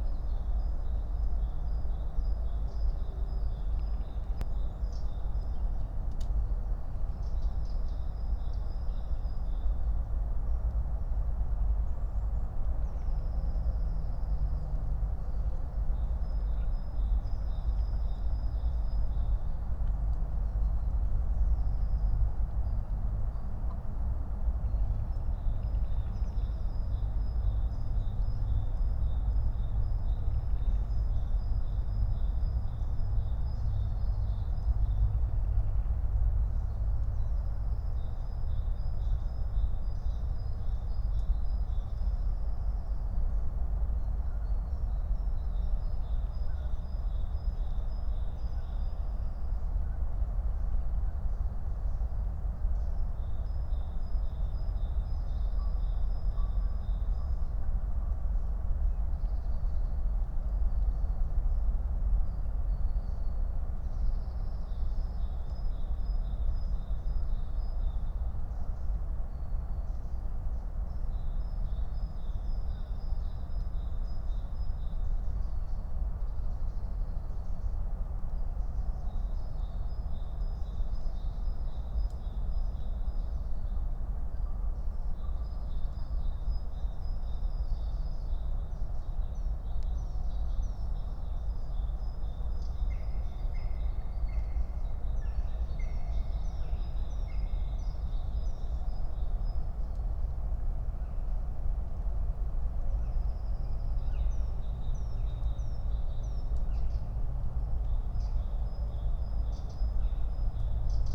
Berlin, NSG Bucher Forst - Bogensee, forest pond ambience
(remote microphone: AOM5024/ IQAudio/ RasPi Zero/ LTE modem)